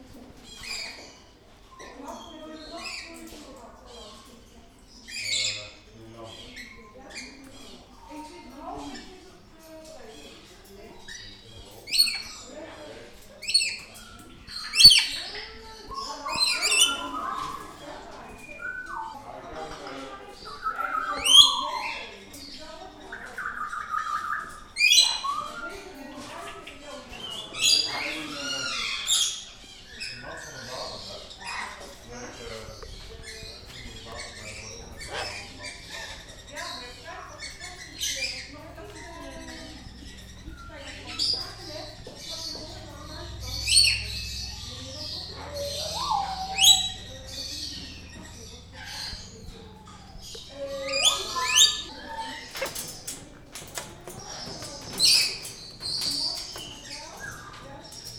Bird shop 4-Animals, Rijswijk.
Birds -mainly parrots- singing, whistling and talking in a bird shop.